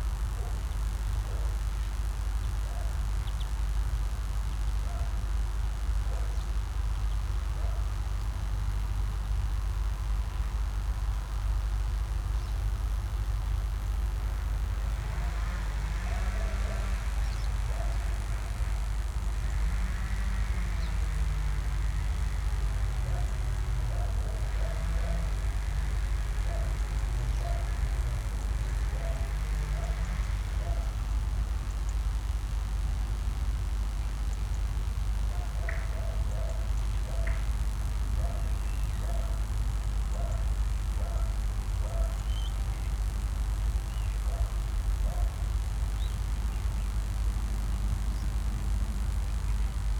ICE station, Limburg an der Lahn, Deutschland - high voltage line
crackling high voltage power line near Limburg ICE train station
(Sony PCM D50, DPA4060)
Limburg, Germany, 29 October 2014, ~12:00